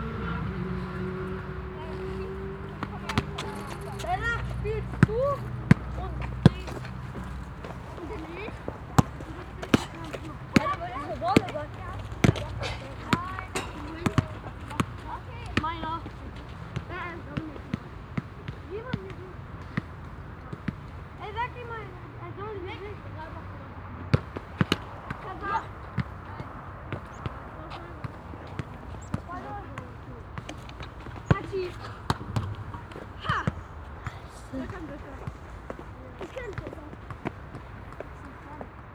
Krupp-Park, Berthold-Beitz-Boulevard, Essen, Deutschland - essen, thyssen-krupp park, soccer playground
Im neu eingerichteten Thyssen-Krupp Park an einem Ballspielplatz. Der Klang von fussballspielenden Kindern. Im Hintergrund Fahrzeuggeräusche.
Inside the new constructed Thyssen-Krupp park at a ball-playground. The sound of children playing soccer. In the distance motor traffic.
Projekt - Stadtklang//: Hörorte - topographic field recordings and social ambiences
Essen, Germany, 19 April, 15:30